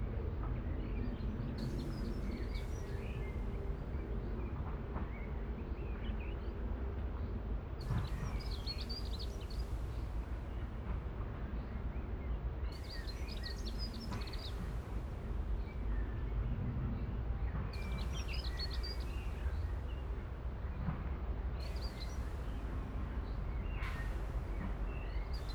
Schönebeck, Essen, Deutschland - essen, schönebeck, train tracks
An den Eisenbahngleisen. Flugzeugüberflug und der Klang vorbeifahrender Züge in der Idylle eines Frühlingsmorgens.
At the railway tracks. A plane passing the sky and the sound of passing by trains.
Projekt - Stadtklang//: Hörorte - topographic field recordings and social ambiences
Essen, Germany, 28 May 2014, ~10:00